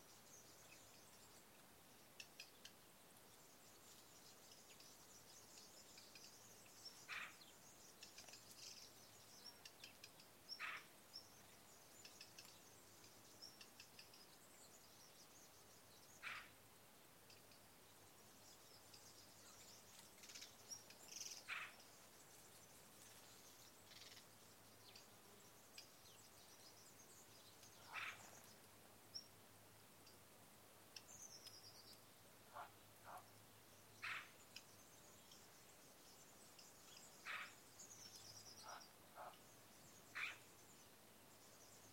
Birds in the Provence
In the countryside near Lourmarin.
Lourmarin, France, 27 September, 16:00